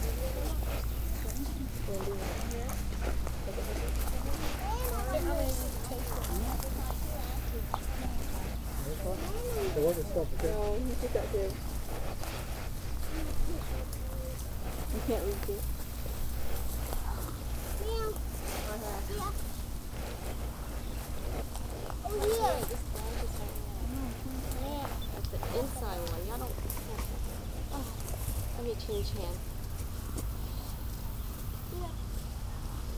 Fun at Chmielewski’s Blueberry Farm's last pick of the season. Birds, buckets, kids, cicadas and other bugs, airplane traffic, ants, people talking..
Church Audio CA-14 omnis on sunglasses dangling around my neck (not so smart) > Tascam DR100 MK-2
TX, USA, 29 May, 11:49